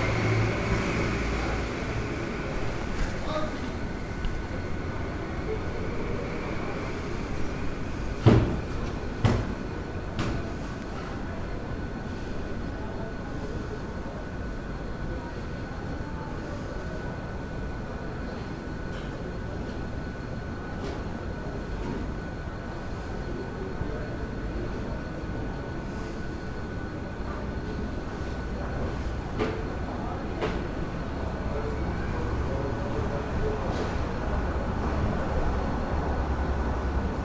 Istanbul, Besşiktaş, out of the noise - quiet details at night
The noise cannot be everywhere at the same time. At night streets can become surprisingly quiet. That can give you the chance to filter out some particular details: you hear the steps of a woman coming home, kids that are still awake, somebody vacuuming the living room and drainage water in the sewers.